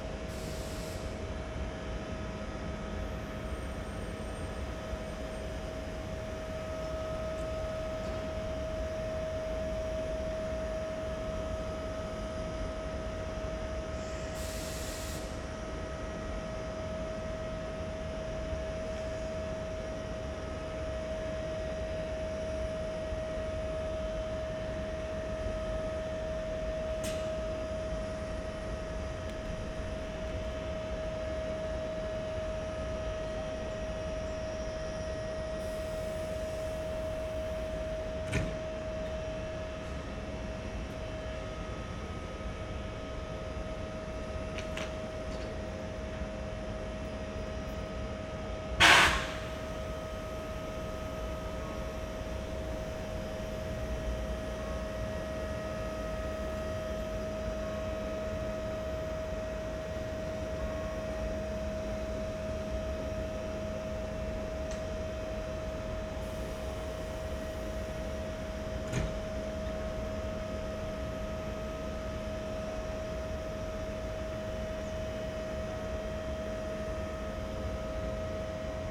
Spielfeld, Strass, Steiermark - station ambience, waiting
ideling continued... strolling around, contemplating on the remains of former activity: restaurants, a bistro, other buildings of unclear purpose, all abandoned, melancholic perception. distant churchbells later.
(SD702 Audio Technica BP4025)